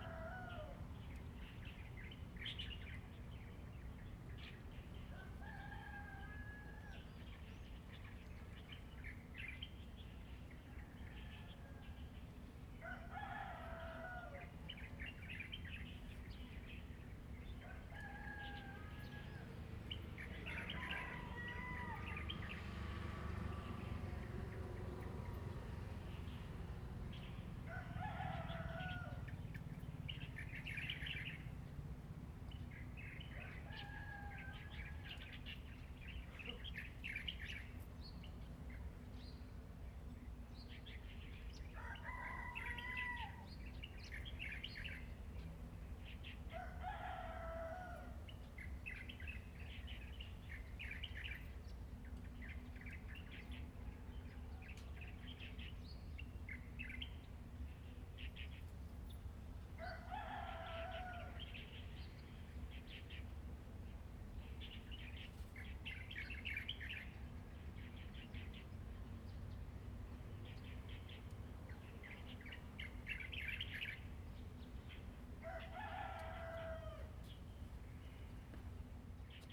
本福村, Hsiao Liouciou Island - Birds singing and Chicken sounds
Birds singing, Chicken sounds
Zoom H2n MS+XY
2 November 2014, 07:00